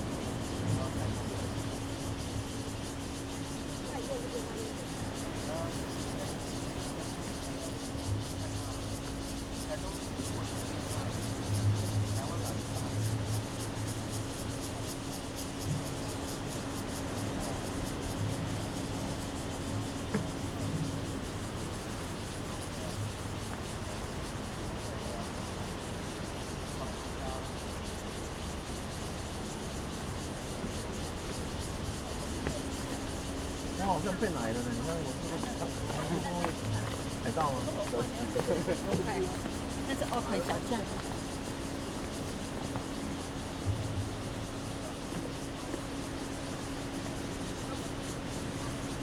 {"title": "金樽遊憩區, Donghe Township - Passenger sitting area", "date": "2014-09-06 12:25:00", "description": "Cicadas sound, Sound of the waves, Traffic Sound, Parking, Passenger sitting area, Very hot weather\nZoom H2n MS+ XY", "latitude": "22.95", "longitude": "121.28", "altitude": "58", "timezone": "Asia/Taipei"}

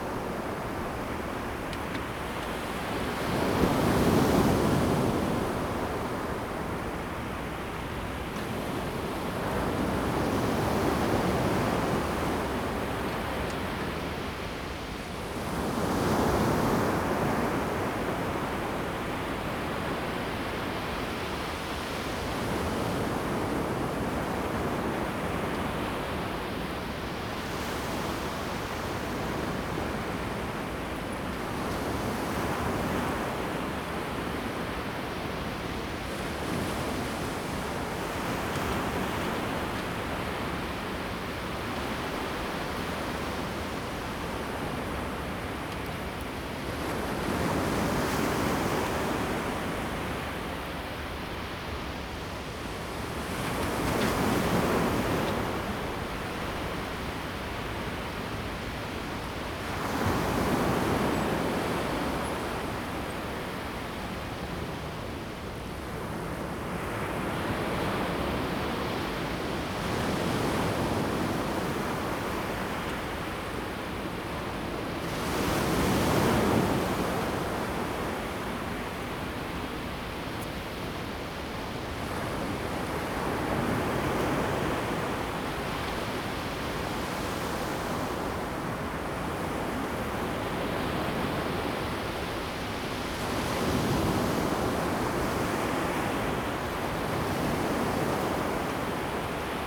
{"title": "西子灣海水浴場, 鼓山區Kaohsiung City - At the beach", "date": "2016-11-22 14:51:00", "description": "Sound of the waves, Beach\nZoom H2n MS+XY", "latitude": "22.63", "longitude": "120.26", "altitude": "1", "timezone": "Asia/Taipei"}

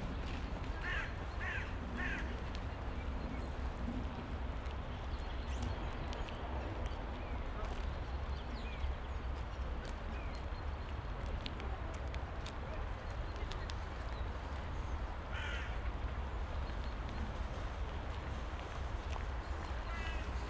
{"title": "Schweizergarten, Wien, Österreich - Schweizer Garten", "date": "2013-03-25 17:15:00", "description": "ambience of Schweizer Garten at the lake - constant traffic hum in the background, birds, dogs, pedestrians passing by - recorded with a zoom Q3", "latitude": "48.19", "longitude": "16.38", "altitude": "195", "timezone": "Europe/Vienna"}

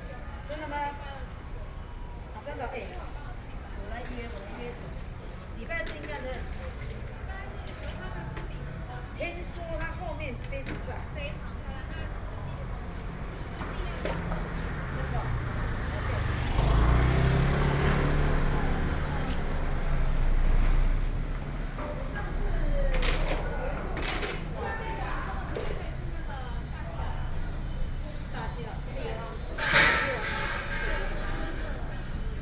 {"title": "New Taipei City, Taiwan - On the street", "date": "2012-11-13 18:45:00", "latitude": "25.11", "longitude": "121.81", "altitude": "62", "timezone": "Asia/Taipei"}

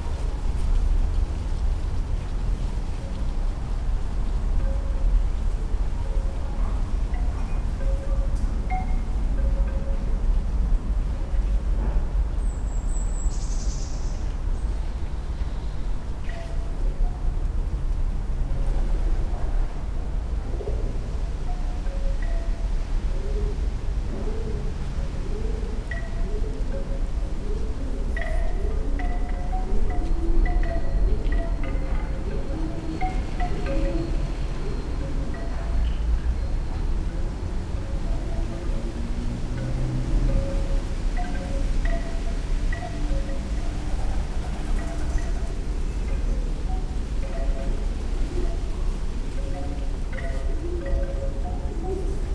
{"title": "Poznan, Jezyce district, Kochanowskiego - backyard", "date": "2019-09-23 14:31:00", "description": "enclosed backyard of a few old apartment buildings. wooden wind chime. someone moving dishes in one of the apartments. pigeons flying around. a bit of traffic comes over the top of the buildings. (roland r-07)", "latitude": "52.41", "longitude": "16.91", "altitude": "77", "timezone": "Europe/Warsaw"}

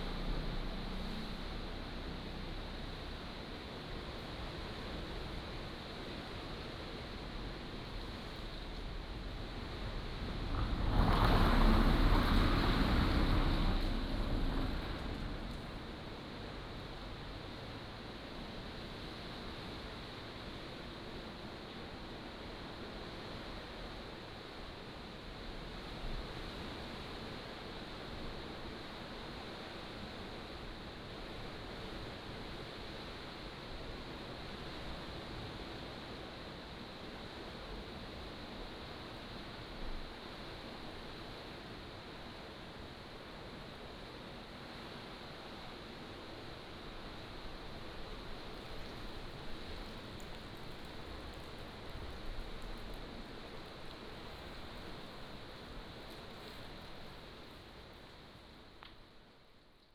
{"title": "朗島村, Ponso no Tao - Inside the cave", "date": "2014-10-29 08:34:00", "description": "Inside the cave, Sound of the waves, Aboriginal rally venue", "latitude": "22.08", "longitude": "121.51", "altitude": "56", "timezone": "Asia/Taipei"}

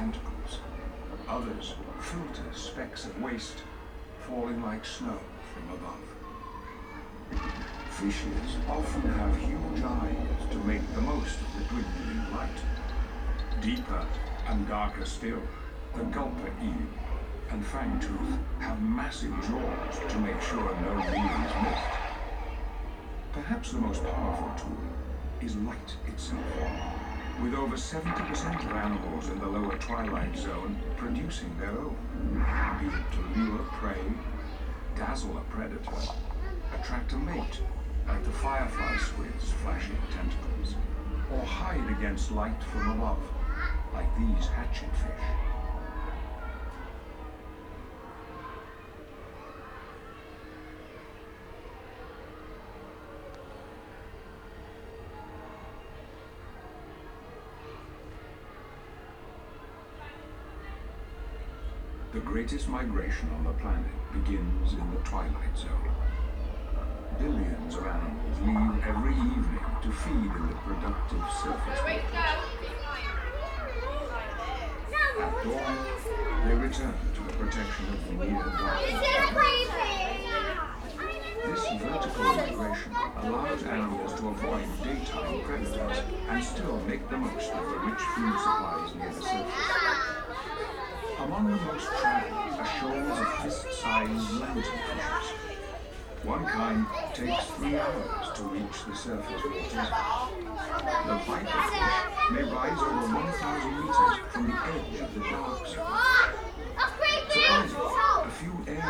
{"title": "Hull, UK - The Deep ...", "date": "2017-07-06 11:00:00", "description": "The Deep ... Hull ... entrance to the deep water feature ... open lavalier mics clipped to baseball cap ... plenty of sounds and noise ...", "latitude": "53.74", "longitude": "-0.33", "altitude": "5", "timezone": "Europe/London"}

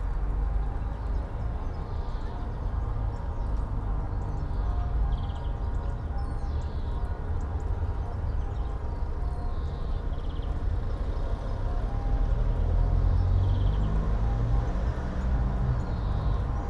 {"title": "all the mornings of the ... - mar 18 2013 mon", "date": "2013-03-18 08:42:00", "latitude": "46.56", "longitude": "15.65", "altitude": "285", "timezone": "Europe/Ljubljana"}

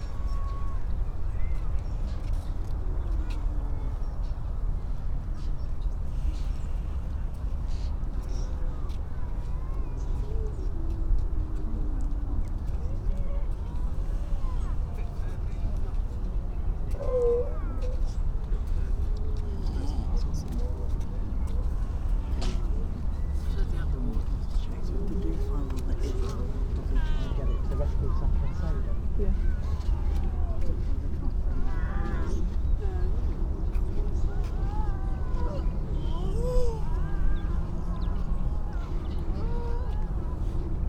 {"title": "Unnamed Road, Louth, UK - grey seals ... donna nook ...", "date": "2019-12-03 10:35:00", "description": "grey seals ... donna nook ... generally females and pups ... SASS ... bird calls ... pied wagtail ... skylark ... dunnock ... rock pipit ... crow ... all sorts of background noise ... sometimes you wonder if the sound is human or seal ..? amazed how vocal the females are ...", "latitude": "53.48", "longitude": "0.15", "altitude": "1", "timezone": "Europe/London"}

